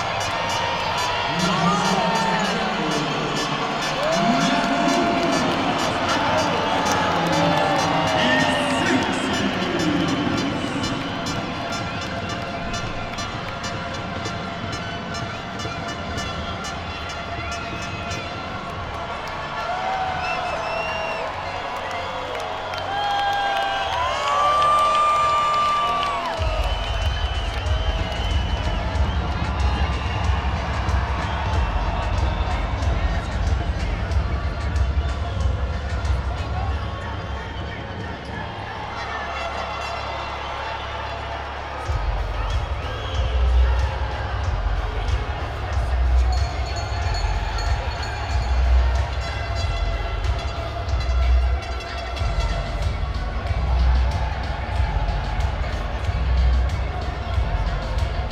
Dr. Lavista, Doctores, Cuauhtémoc, Ciudad de México, CDMX, Mexiko - Lucha Libre Arena Mexico
In Mexico City there are two official arenas where you can watch Lucha Libre. One is the huge Arena de México and the other is the Arena Coliseo.